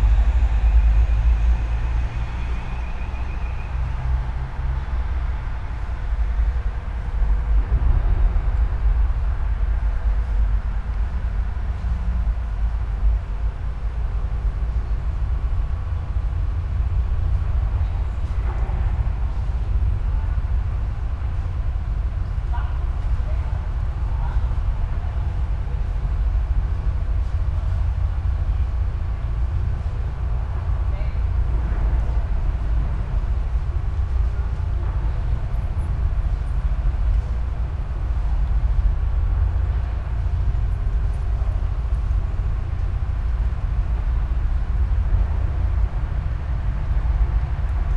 all the mornings of the ... - aug 13 2013 tuesday 07:03
Maribor, Slovenia, 13 August 2013